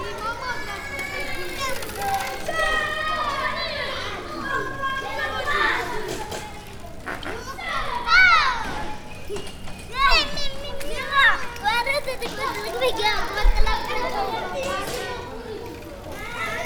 Taranto, Province of Taranto, Italy - Children biking around us
This was recorded for the project Taranto Sonora, headed by Francesco Giannico.
The old town of Taranto is based on an Island, between one of the most polluting steel factories in the Europe, ILVA, and the new town which has been built in the fascist era, where richer people leave.
In this recording, children are playing in Largo San Martino and biking around me and Fabio who where there in center of the square with the recorder on a tripod, visible to them.